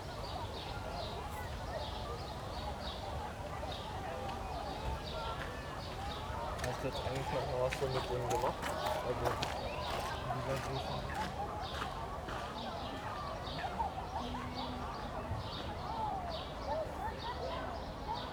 Westufer des Orankesees, Orankestrand, Berlin, Germany - Kids enjoying open air swimming heard from across the lake
Such beautiful warm weather - 28C, sun and blue sky. Kids enjoying open air swimming pools is one of Berlin's definitive summer sounds. Regularly mentioned as a favourite. The loudspeaker announcements reverberate around the lake.